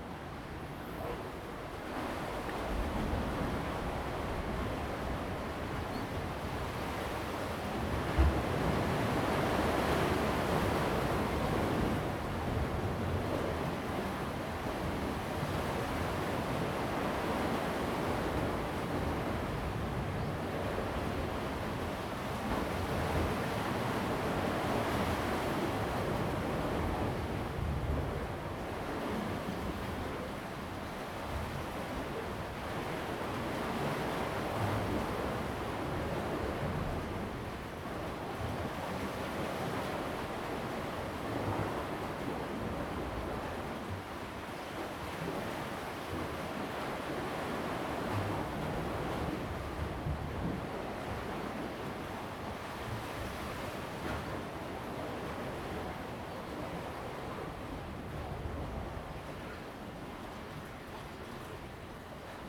Koto island, Taitung County - Clipping block
On the bank, Tide and Wave
Zoom H2n MS+XY
29 October 2014, 15:53